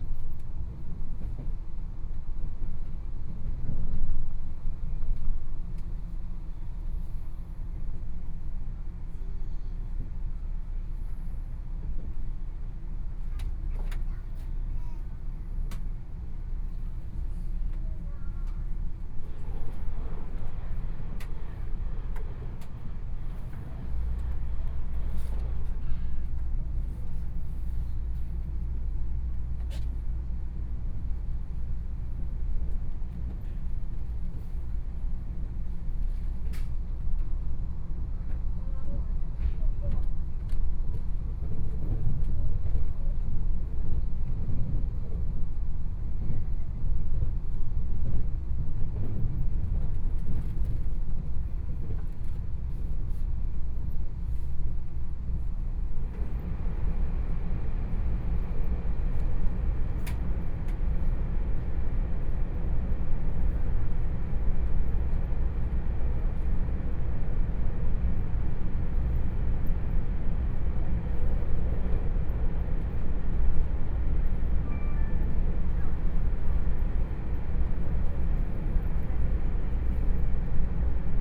Sioulin Township, Hualien County - Puyuma Express
Puyuma Express, Tze-Chiang Train, Interior of the train, North-Link Line, Binaural recordings, Zoom H4n+ Soundman OKM II
Xiulin Township, Hualien County, Taiwan, 18 January 2014, ~15:00